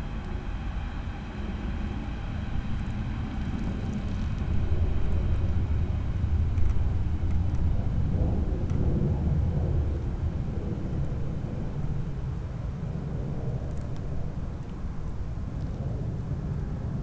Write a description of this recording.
sizzling noise of a reed screen fence, local trains and the distant snow absorbed drone of traffic, january 2014